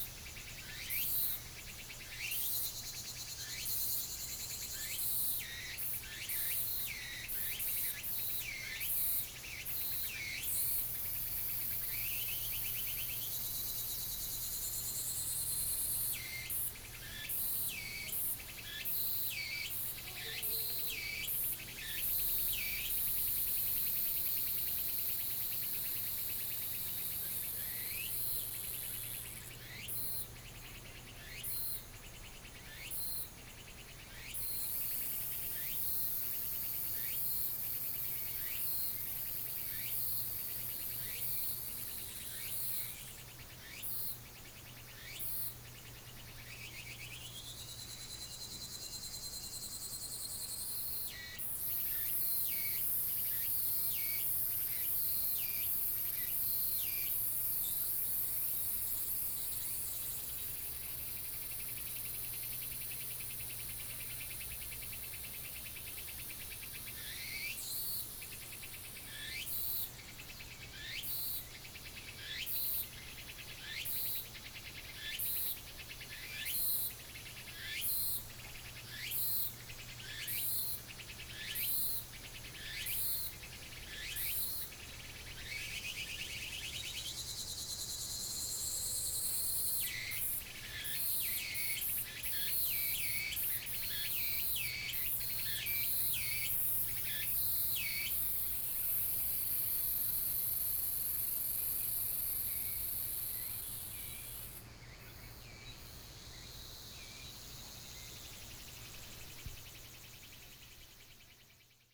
Woody House, 南投縣埔里鎮桃米里 - Bird calls
Bird calls
Binaural recordings
Sony PCM D100+ Soundman OKM II
Nantou County, Taiwan, 26 August 2015, 15:28